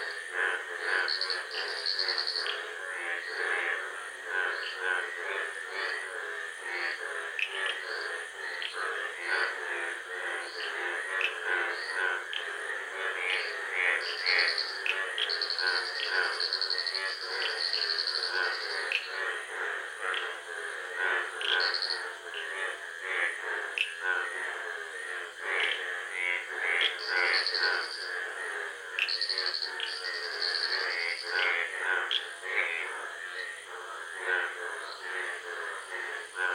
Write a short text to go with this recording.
A part of field recordings for soundscape ecology research and exhibition. Rhythms and variations of vocal intensities of species in sound. Hum in sound comes from high tension cables running near the pond. Recording format: Binaural. Recording gear: Soundman OKM II into ZOOM F4. Date: 22.04.2022. Time: Between 00 and 5 AM.